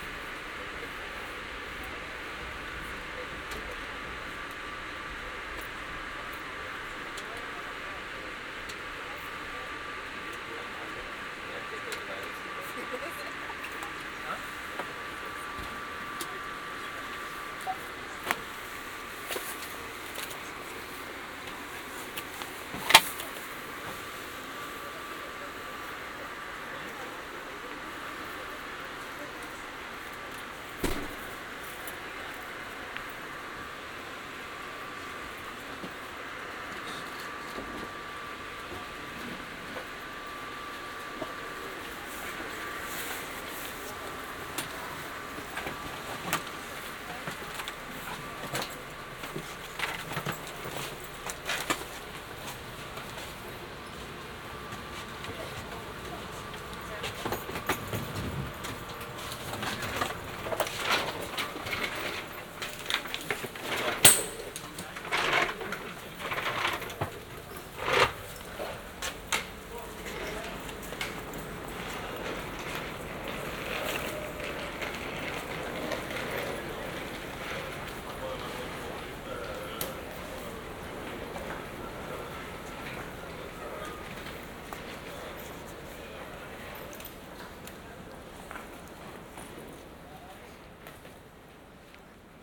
Montreal: Gare Centrale, inside arriving train - Gare Centrale, inside arriving train
equipment used: M-Audio MicroTrack II w/ Soundman CXS OKM II Binaural Mic
Binaural recording inside Amtrak train #68 (NY to Montreal) as it arrives at Gare Centrale